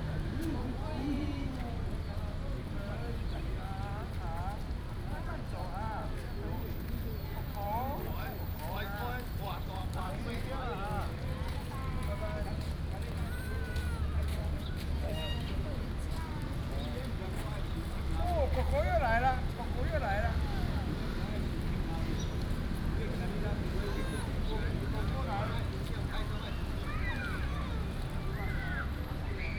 嘉興公園, 大安區, Taipei City - The elderly and children
in the Park, The elderly and children, Traffic Sound, Bird calls